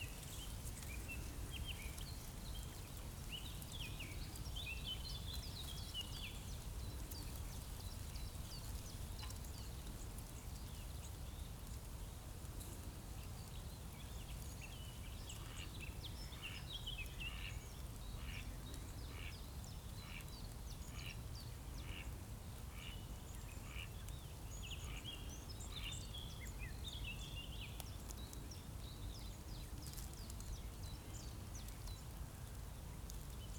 Lietzengraben, Berlin Buch, Deutschland - quiet field ambience in spring
having a rest at Lietzengraben, a half natural half man made ditch, little river, creek or brook, which is of high importance to the ecological condition in this area. Quiet field ambience with gentle wind in trees and dry weed.
(Tascam DR-100MKIII, DPA4060)
17 April, 12:00